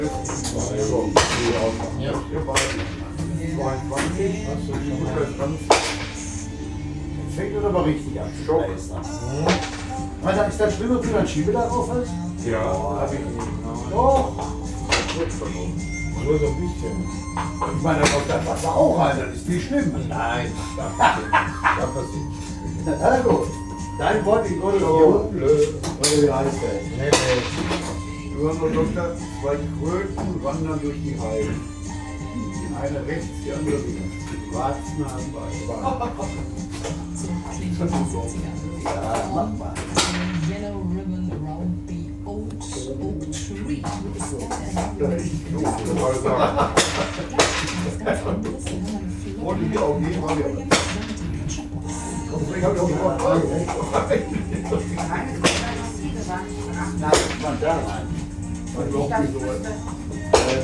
Dahlhausen, Bochum, Deutschland - gaststätte heinrichsbauer
gaststätte heinrichsbauer, kassenberger str. 16, 44879 bochum
Bochum, Germany, 17 May, ~18:00